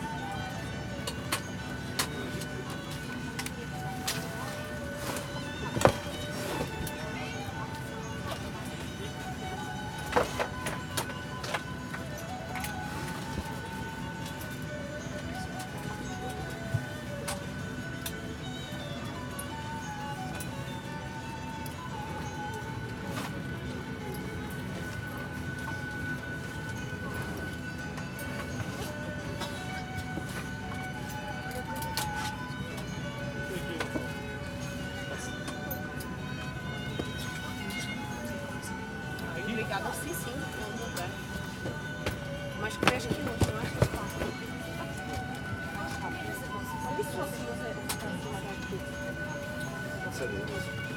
Porot, Francisco de Sá Carneiro Airport, at the runway - boarding of ryanair flight to Lübeck
already sitting on my seat. two streams of passengers forcing their way to their desired seats from both directions. sounds of shoes shuffling and elbow scuffles. radio announcements, audio adds, jingles, classical music. jet engines idling outside.
Francisco de Sá Carneiro Airport (OPO), Portugal, 3 October 2013